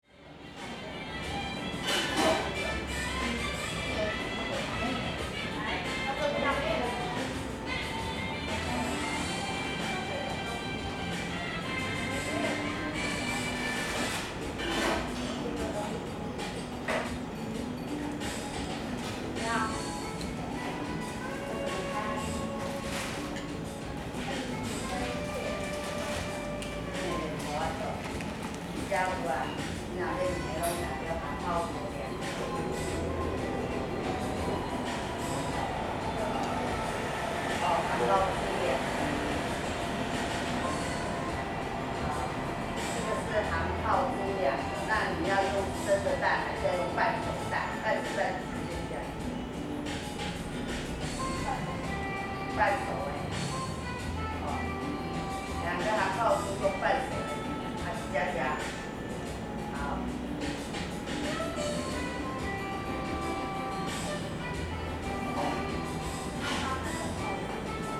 Zhengyi N. Rd., Sanchong Dist., New Taipei City - In the restaurant
In the restaurant
Sony Hi-MD MZ-RH1 +Sony ECM-MS907